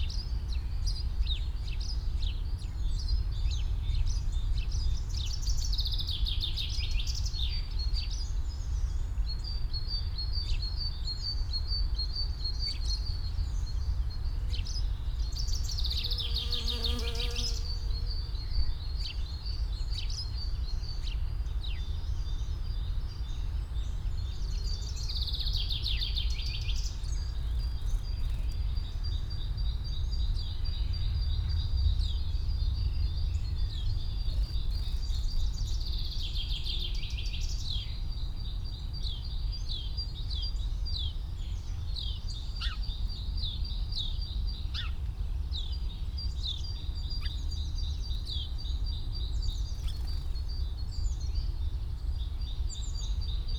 Friedhof Columbiadamm, Berlin, Deutschland - cemetery, spring ambience
Friedhof Columbiadamm (ehem. Garnisonsfriedhof), cemetery, weekend morning in early spring ambience
(SD702, DPA4060)